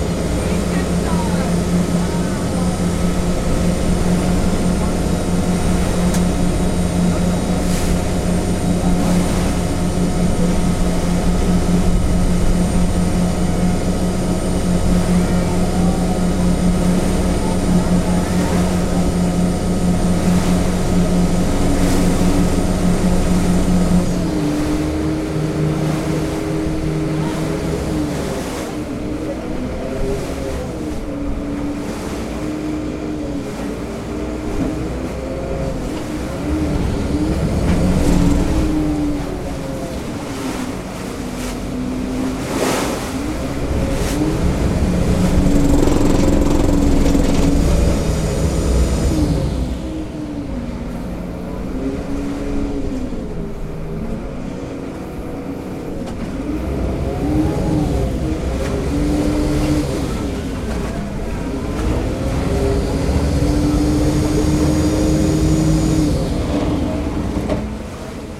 Giudecca, Venezia - linea 2 redentore->palanca
venezia, linea 2 redentore->palanca